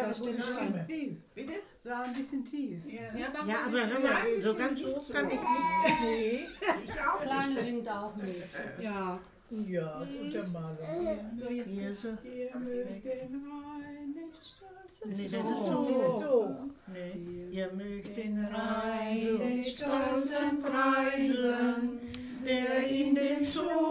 berchum, alter hohlweg, the westfalia song
family choir of the westfalia song on grand ma's 85's birthday
soundmap nrw: social ambiences/ listen to the people in & outdoor topographic field recordings